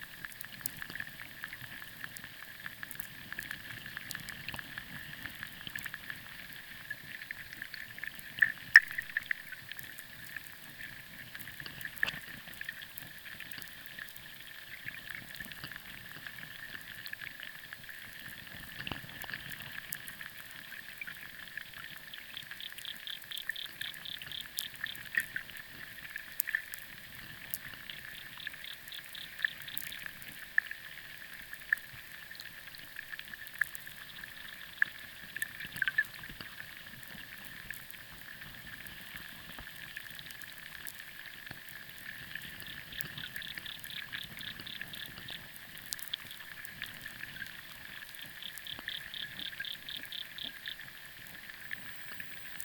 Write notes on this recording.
exploring sound territories beyond common human hearing. underwater sounds via hydrophones and vlf/air electricity via diy electromagnetic antenna Priezor